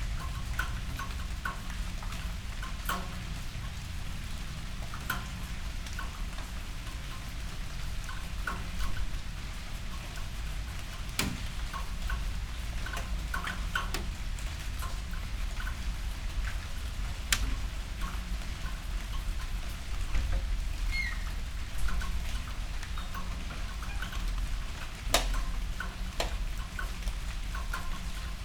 Berlin Bürknerstr., backyard window - November rain
Saturday evening, after a grey November day, it has started to rain. Sound of raindrops on fallen leaves.
(Sony PCM D50, Primo EM172)
Berlin, Germany